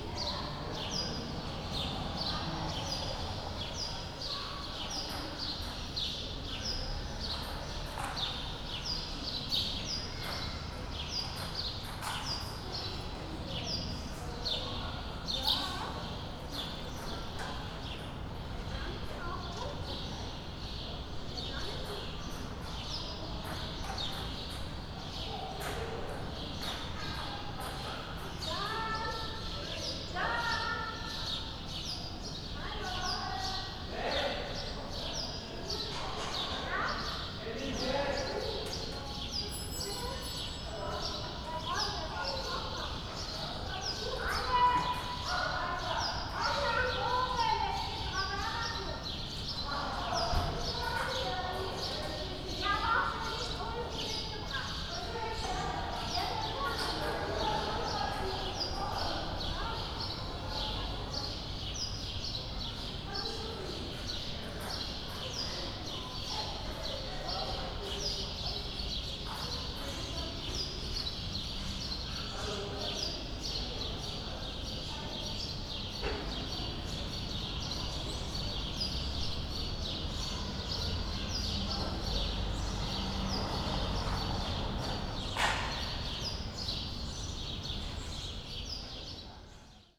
Liegnitzer Str., Kreuzberg, Berlin - backyard ambience
sunday early evening ambience in a backyard, Berlin, Liegnitzer Str.
(tech: Sony PCM D50)